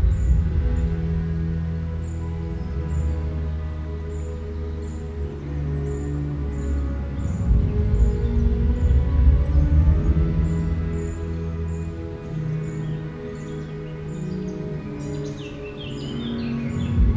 Bd Robert Barrier, Aix-les-Bains, France - Préparatifs Musilac
Au bord du Sierroz, essais de la sono du festival Musilac sur l'esplanade du lac du Bourget, avant cinq soirées fortes en décibels.